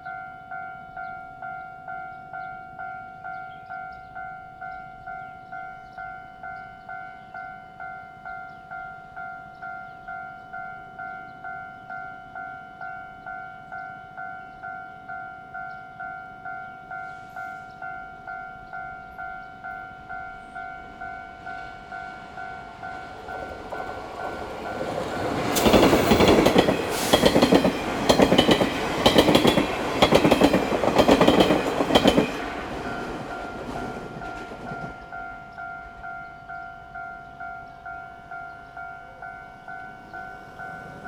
學進路, 五結鄉二結村 - Near the railroad tracks
At railroad crossing, Near the railroad tracks, Traffic Sound, Trains traveling through
Zoom H6 MS+ Rode NT4